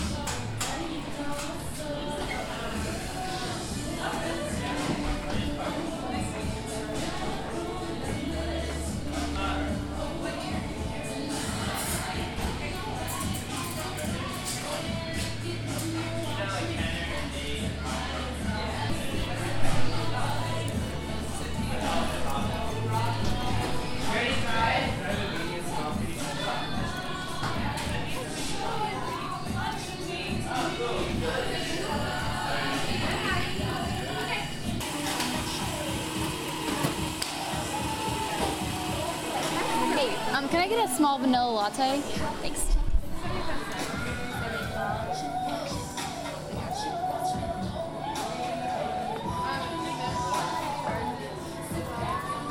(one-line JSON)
{"title": "Boone, NC, USA - sense of place: coffee shop", "date": "2016-10-09", "latitude": "36.22", "longitude": "-81.68", "altitude": "985", "timezone": "America/New_York"}